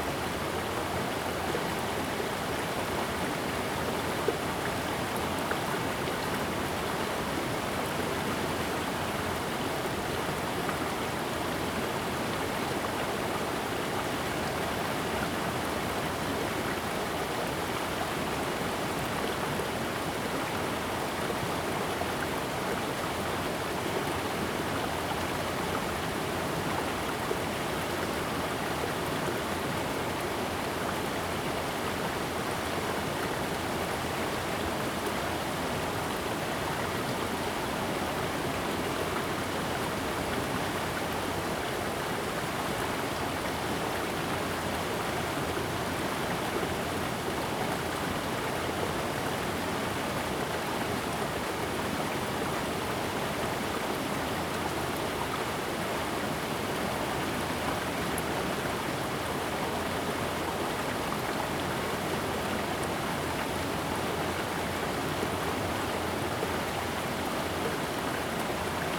初英親水生態公園, 南華村 - Streams
Streams of sound, Hot weather
Zoom H2n MS+XY
Hualien County, Taiwan